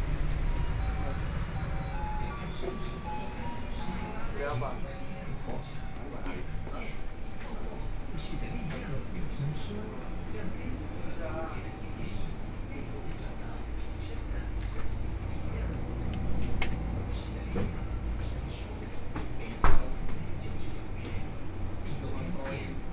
Bo'ai St., Zhubei City - soundwalk
walking in the street, Convenience stores, Zoom H4n+ Soundman OKM II